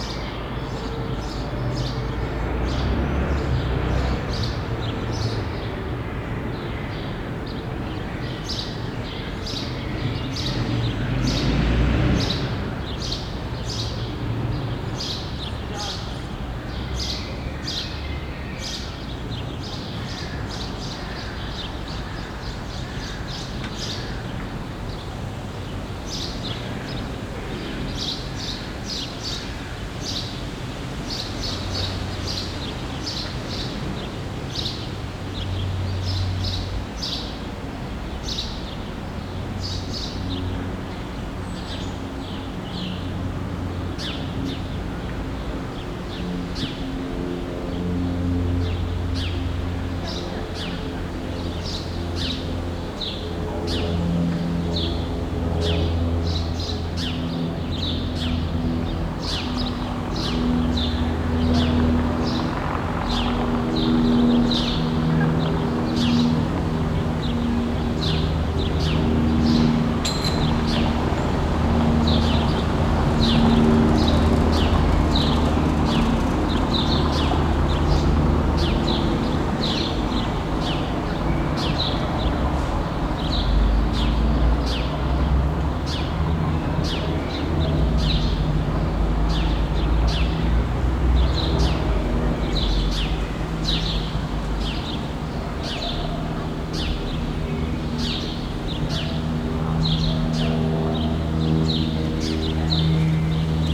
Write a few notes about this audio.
A Saturday afternoon in Berlin-Kreuzberg